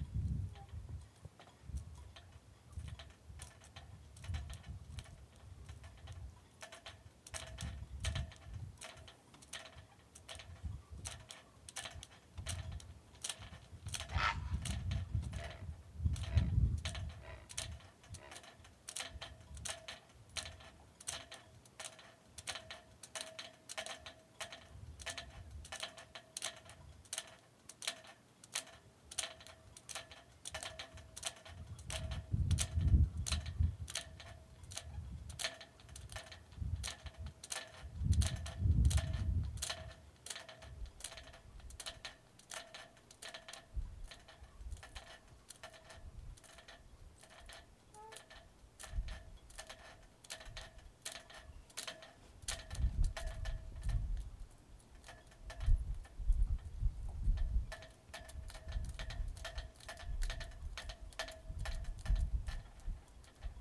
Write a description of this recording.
Leave the cabin on a bitterly cold 5am in the San Juan Islands (Washington). Walk toward the dock, stopping at a loose metal sign. Surprise a sleeping blue heron. Step on a loose board. End on the dock to the gamelan chorus of sailboat riggings in the wind.